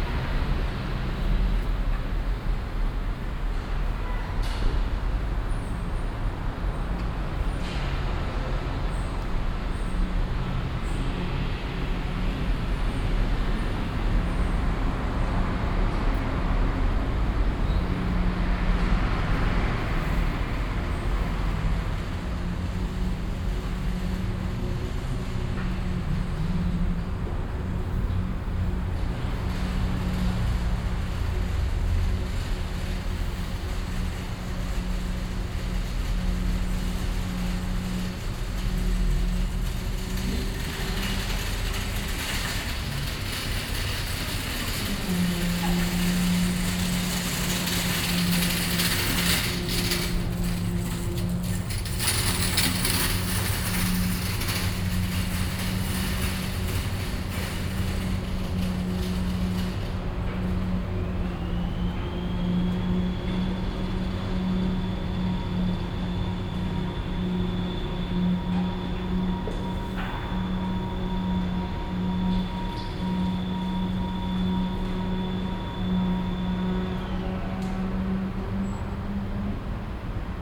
homeless people with shopping carts and pigeons in a small alley in the early afternoon
soundmap international
social ambiences/ listen to the people - in & outdoor nearfield recordings